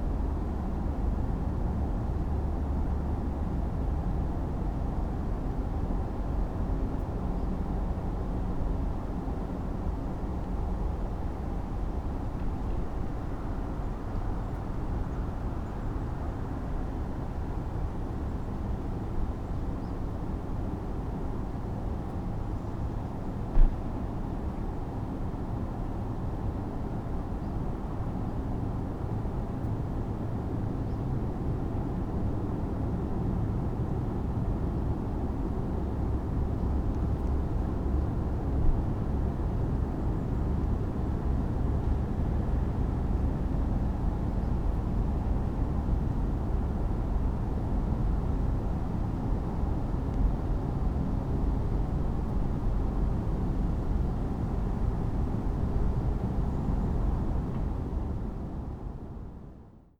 above Jezeří castle, Sunday afternoon, constant drone coming from the huge open cast mine (Sony PCM D50, Primo EM172)